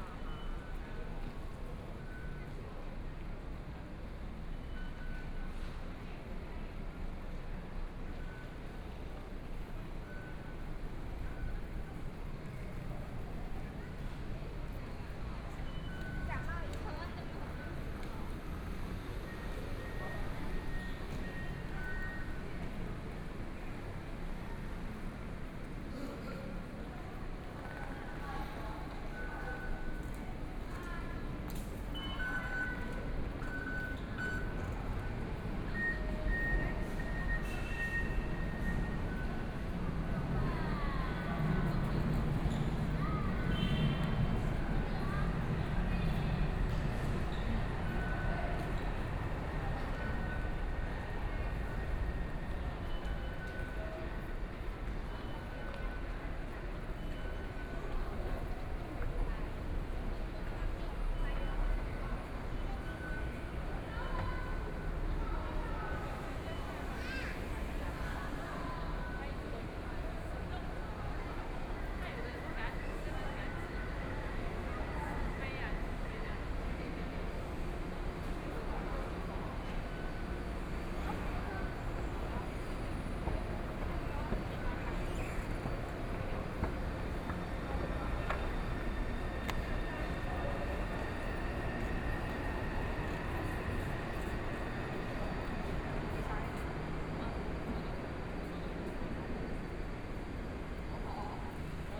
Beitou Station, Taipei - in the Station
Walking in the Station, Binaural recordings, Zoom H4n + Soundman OKM II
Taipei City, Taiwan, 6 February